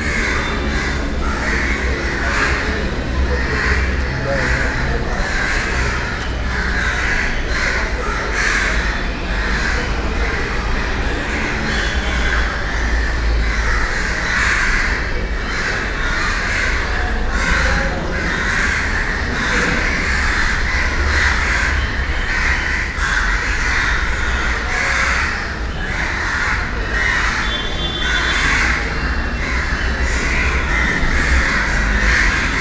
India, Mumbai, jyotiba Phule Market, Crawford meat market, crows, meat